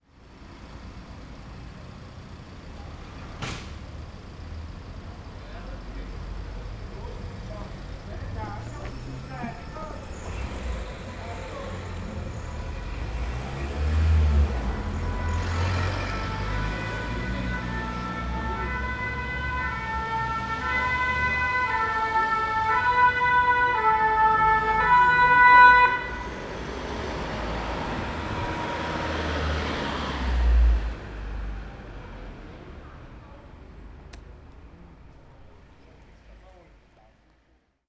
wissembourg: rue du pied de boeuf - the city, the country & me: siren of an approaching ambulance
the city, the country & me: october 15, 2010
Wissembourg, France, 15 October 2010